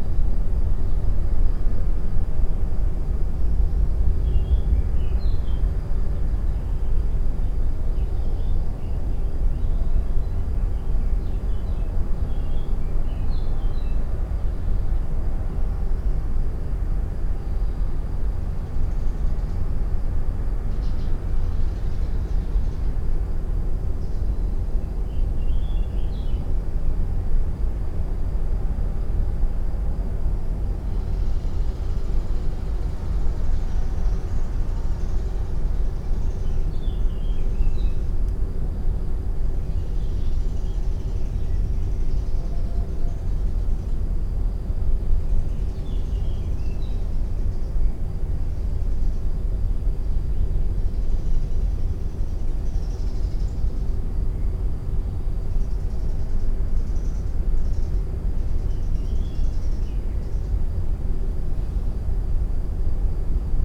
{"title": "Kidricevo, Slovenia - disused factory resonance 2", "date": "2012-06-18 19:38:00", "description": "another vantage point from further down the same passageway. from there the side wall of the factory had already been removed, hence more sounds from outside reached the microphones.", "latitude": "46.39", "longitude": "15.79", "altitude": "239", "timezone": "Europe/Ljubljana"}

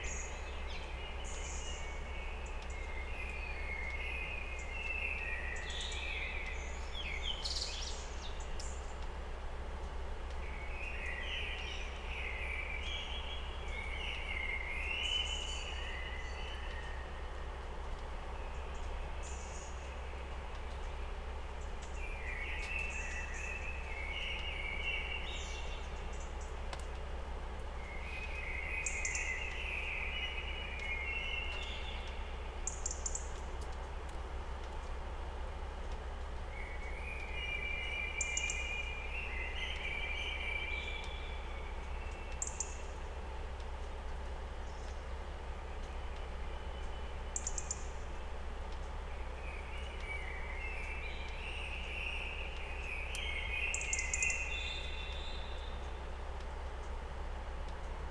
{"title": "Quarentine Night birds - Olivais Centro Cívico, 1800-077 Lisboa, Portugal - Quarentine Night birds", "date": "2020-03-31 02:13:00", "description": "During quarentine (March 2020), the night birds are more audible (active), because of the lack of human produced sound. Recorded from my window with a SD mixpre6 and a pair of Primo 172 Clippy's in AB stereo configuration (3 meters apart).", "latitude": "38.76", "longitude": "-9.12", "altitude": "85", "timezone": "Europe/Lisbon"}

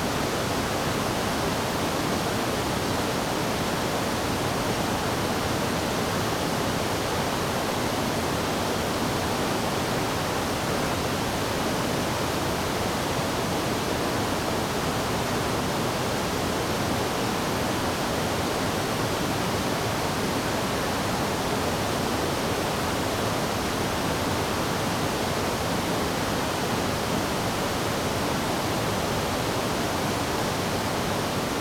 {"title": "瀑布路, 烏來區烏來里, New Taipei City - Facing the waterfall", "date": "2016-12-05 09:40:00", "description": "Facing the waterfall\nZoom H2n MS+ XY", "latitude": "24.85", "longitude": "121.55", "altitude": "171", "timezone": "GMT+1"}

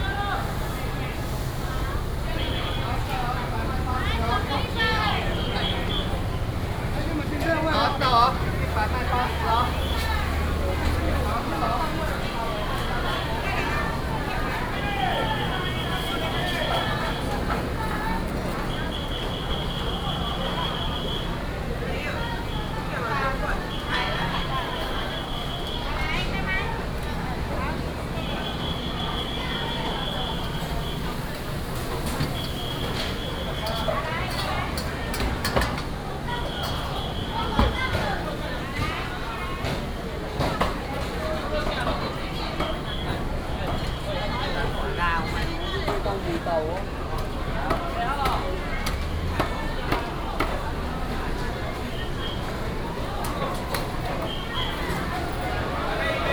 {"title": "三元黃昏市場, Yingge Dist., New Taipei City - Walking in the traditional market", "date": "2017-08-05 17:00:00", "description": "Walking in the traditional market, traffic sound, Command the whistle of traffic", "latitude": "24.97", "longitude": "121.32", "altitude": "110", "timezone": "Asia/Taipei"}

